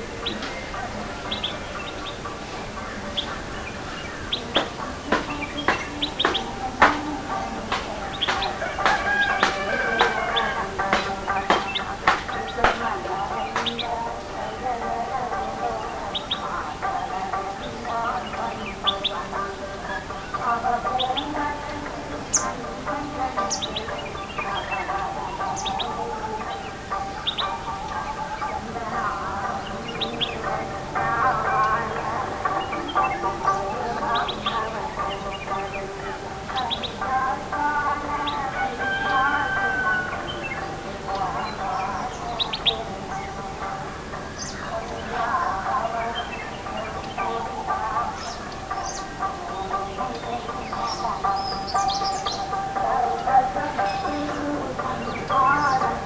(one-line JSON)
{"title": "Nullatanni, Munnar, Kerala, India - dawn Munnar - over the valley 3", "date": "2001-11-06 17:42:00", "description": "dawn Munnar - over the valley part 3. All the Dawn Munnar parts are recorded in one piece, but to cut them in peaces makes it easier to handle.\nMunnar is situated in a lustfull green valley surrounded by tes bushes. Munnar istself is a rather small and friendly town. A pleasant stay is perhaps not garanteed, but most likely.", "latitude": "10.09", "longitude": "77.06", "altitude": "1477", "timezone": "Asia/Kolkata"}